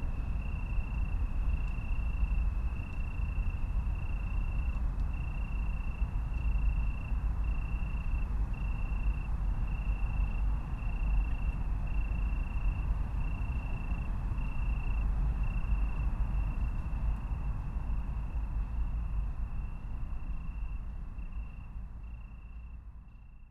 Köln Stadtgarten, place revisited a year later, attracted by the gentle sound of the crickets, further: a rain, bicycles, pedestrians, traffic
(Sony PCM D50, Primo EM172)
16 August 2016, ~22:00